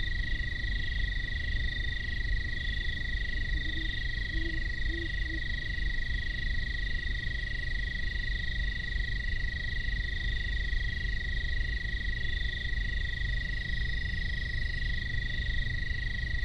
Commercial Township, NJ, USA - insects and owls
Mild temperatures revitalize insect chatter as midnight great horned owls signify territories. An industrial sand plant drones discreetly in the distance.
18 October 2016